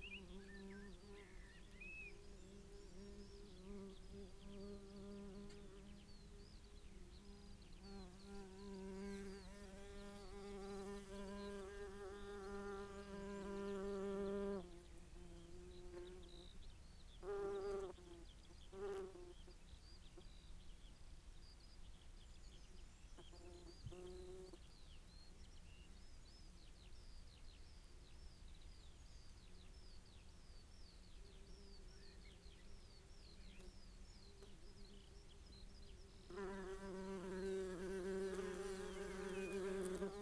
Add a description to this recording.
grubbed out bees nest ... buff tipped bees nest ..? dug up by badger ..? dpa 4060s in parabolic to MixPre3 ... parabolic resting on nest lip ... return visit ... bird calls ... song ... blackbird ... yellowhammer ... skylark ... corn bunting ... blue tit ... chaffinch ... some spaces between the sounds ...